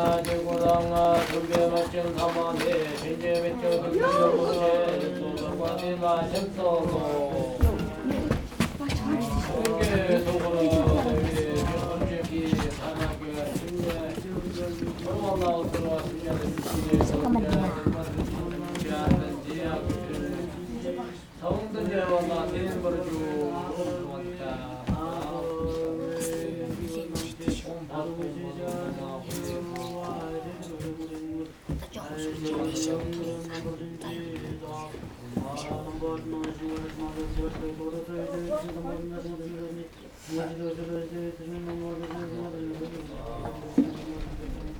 Monks chanting at old temple in Korokum